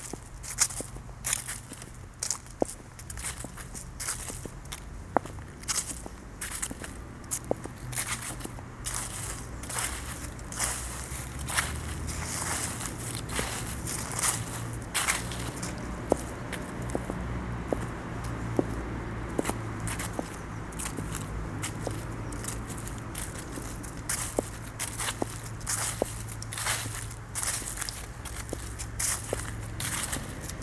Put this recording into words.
public park, walking on path, on dead leaves, close to Chiese river